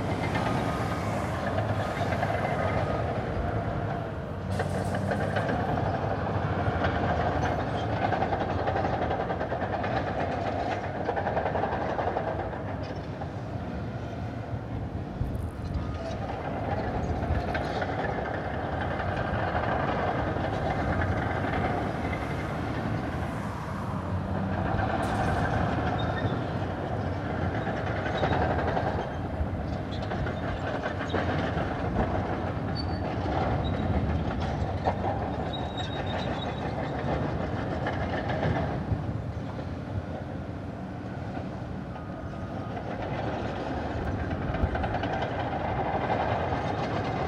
Port of Los Angeles, Terminal Island - Terminal Island Demolition Site
Sounds of a pier being demolished near the entrance to the Terminal Island Prison and Deportation Center. Charlie Manson was held here for a brief period of time before being sent to Folsom State Prison.